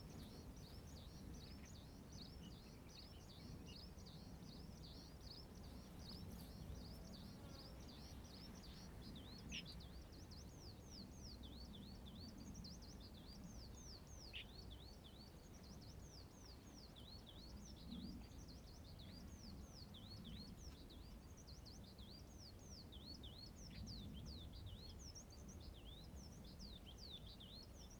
龍磐公園, 恆春鎮 Pingtung County - In the bush
Birds sound, traffic sound, In the bush
Zoom H2n MS+XY